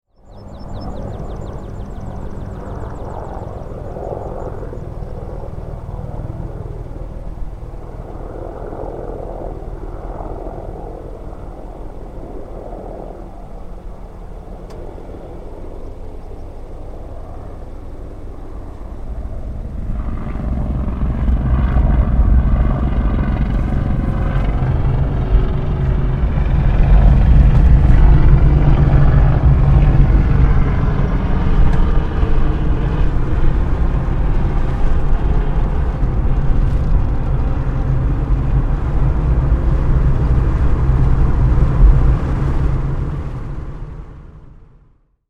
Otterburn Artillery Range - 2 helicopters
While recording mortar fire, 2 helicopters began to circle above me, monitoring distance and activity near active, red flag (live fire) areas.